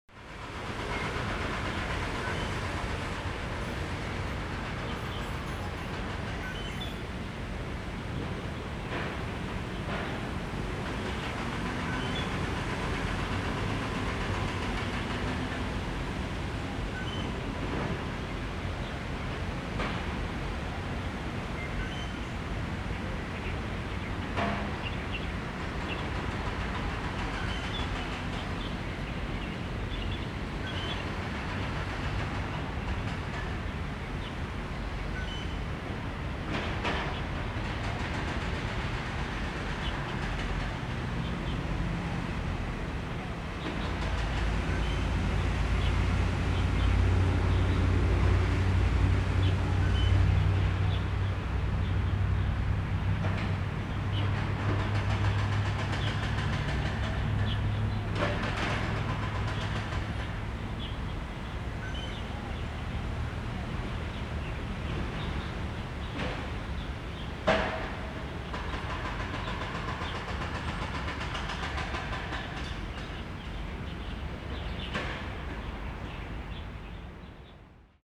Lingya District - In the park
In the park, Sony ECM-MS907, Sony Hi-MD MZ-RH1